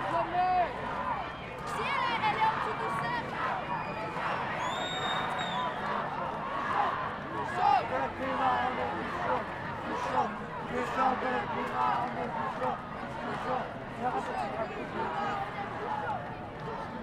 Kunstberg, Brussel, België - Climate protests on the Mont des Arts
On est plus chaud que le climat!